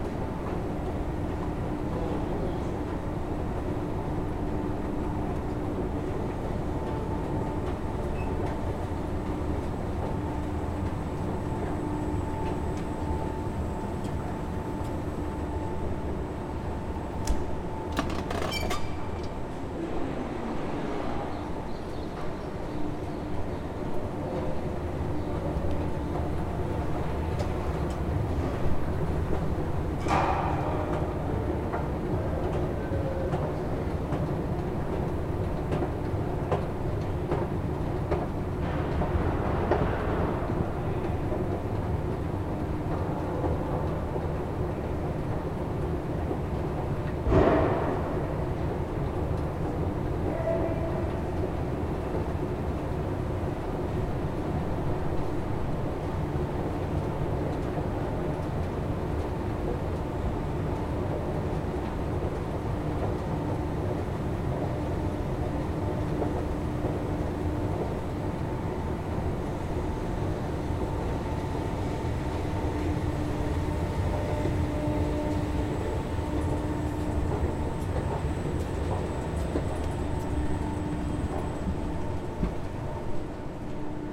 This recording is the first of two, one made when I went to the train station. This aisle is mostly very very busy, but now very very empty...